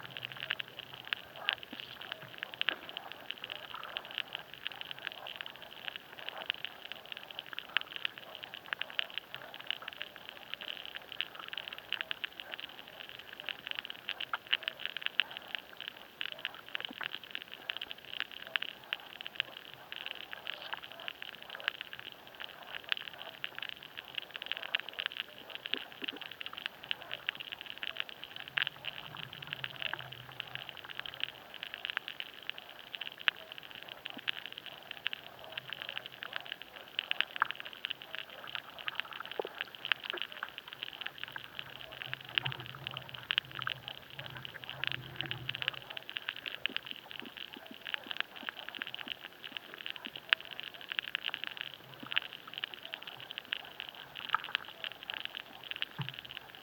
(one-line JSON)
{"title": "Pilveliai, Lithuania, toadpoles feeding", "date": "2021-06-05 17:25:00", "description": "Hydrophone in the pond with toadpoles", "latitude": "55.58", "longitude": "25.59", "altitude": "132", "timezone": "Europe/Vilnius"}